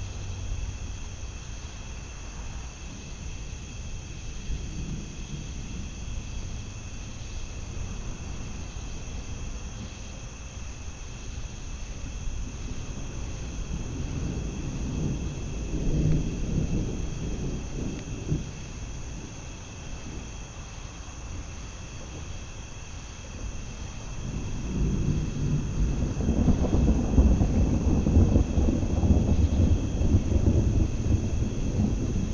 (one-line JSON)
{"title": "Upper Deerfield Township, NJ, USA - approaching thunderstorm", "date": "2016-07-25 21:30:00", "description": "Approaching thunderstorm (good headphones or speakers needed to achieve base reproduction) with insects chanting and distant green frog gulping. Nearby road traffic. Lakeside recording.", "latitude": "39.45", "longitude": "-75.24", "altitude": "1", "timezone": "America/New_York"}